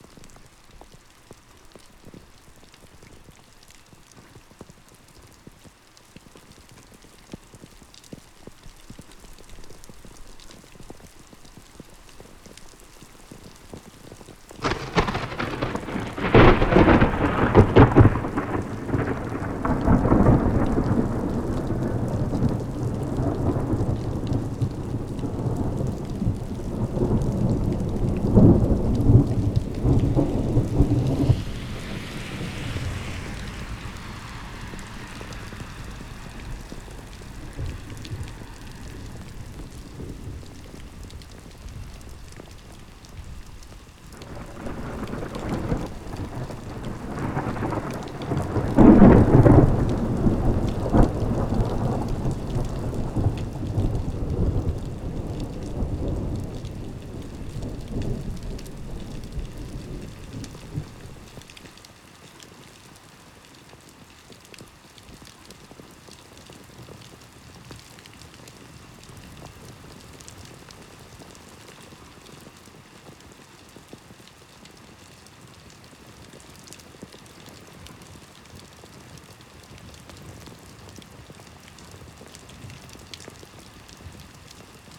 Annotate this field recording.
Tormenta de verano a su paso por Sant Bartomeu, resonando en los campos, valles y colinas que rodean el pueblo.